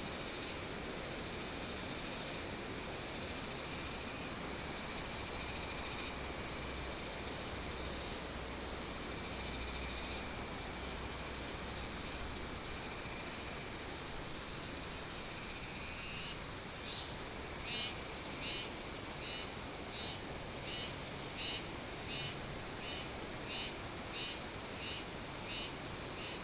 蓬萊溪生態園區, Nanzhuang Township - Ecological protection area
Ecological protection area, Cicadas, Insects, The sound of birds, Traffic sound, Binaural recordings, Sony PCM D100+ Soundman OKM II